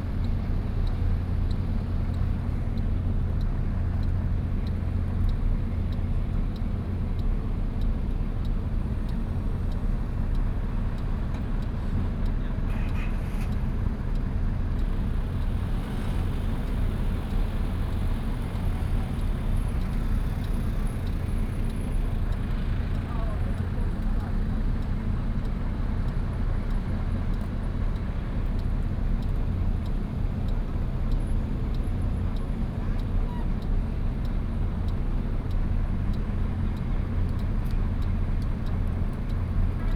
八斗子漁港, Keelung City - In the fishing port
Traffic Sound, In the fishing port